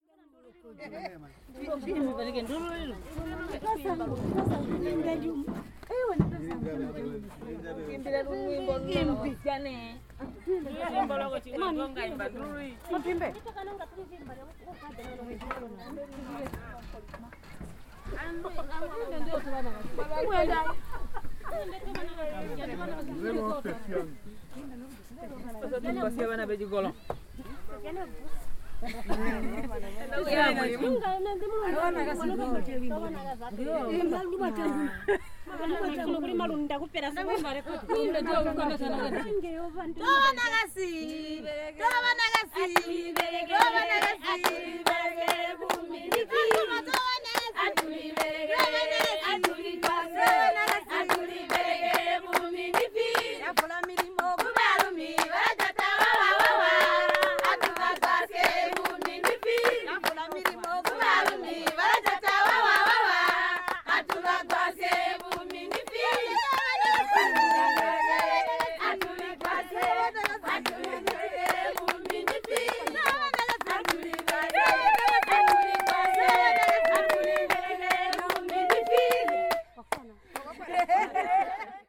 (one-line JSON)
{"title": "Sebungwe Primary School, Binga, Zimbabwe - Women lets work for ourselves....", "date": "2016-05-24 12:56:00", "description": "the song of the Tuligwasye Women: \"Women, lets work for ourselves....!\"", "latitude": "-17.75", "longitude": "27.23", "altitude": "497", "timezone": "Africa/Harare"}